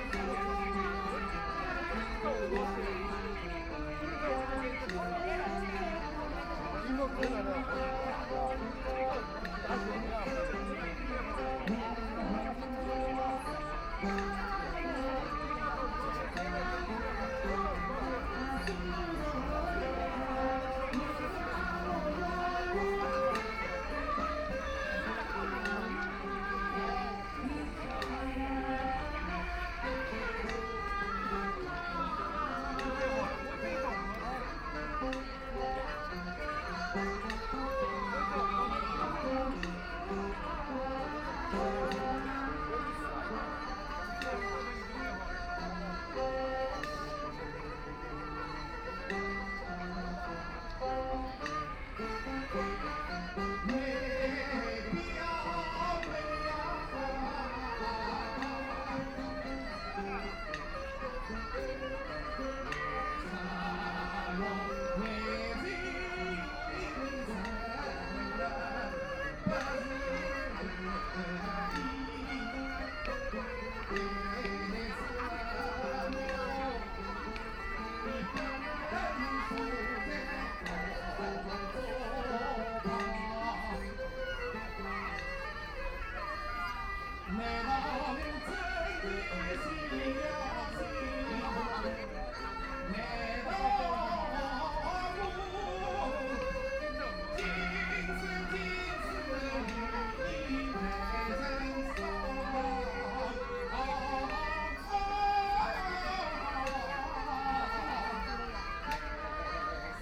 Heping Park, Shanhai - singing
Old people are singing traditional songs, Erhu, Binaural recording, Zoom H6+ Soundman OKM II